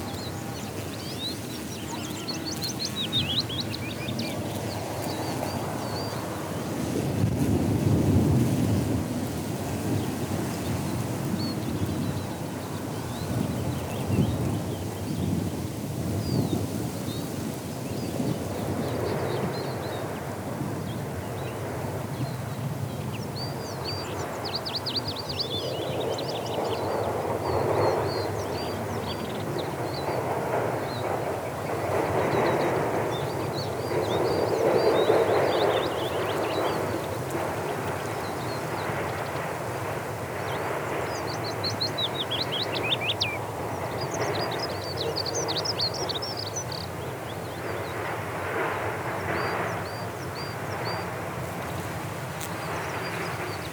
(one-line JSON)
{"title": "Walking Holme truck and bee", "date": "2011-05-29 00:27:00", "description": "parabolic dish recording looking down the valley with close up bees and long grass and a logging truck down driving up a rough track.", "latitude": "53.53", "longitude": "-1.85", "altitude": "393", "timezone": "Europe/London"}